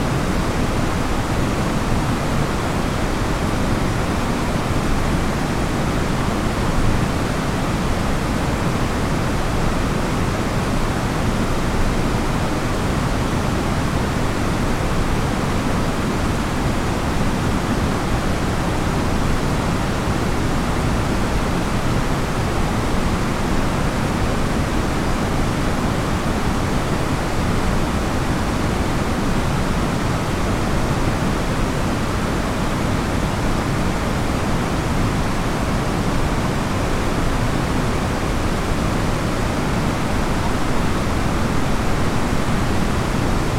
Bad Gastein, Österreich - wasserfall
wasserfall bad gastein
Bad Gastein, Austria, 6 April, ~00:00